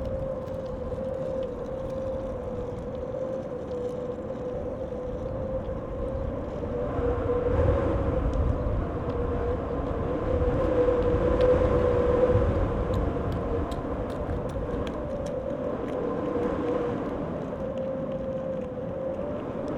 Tempelhof, Berlin - wind in fence
wind in fence on Templehof airfield
Berlin, Deutschland, European Union, 18 April 2013, 18:40